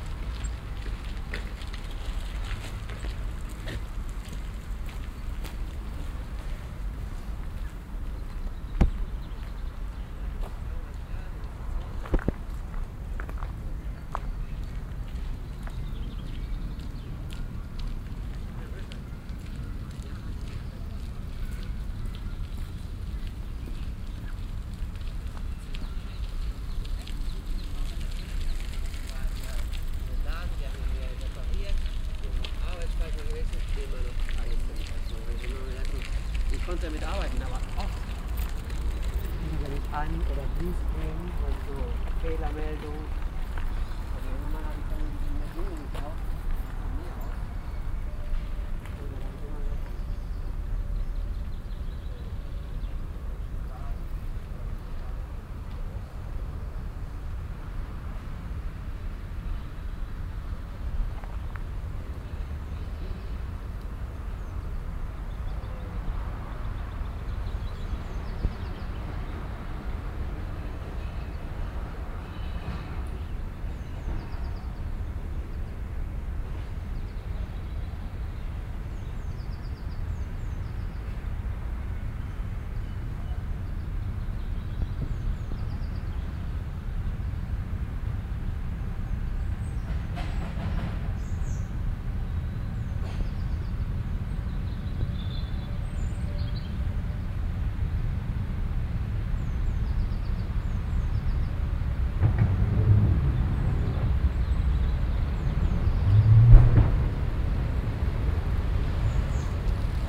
cologne, stadtgarten, weg, schranke
parkambiencen
project:
klang raum garten - fieldrecordings
7 May, ~21:00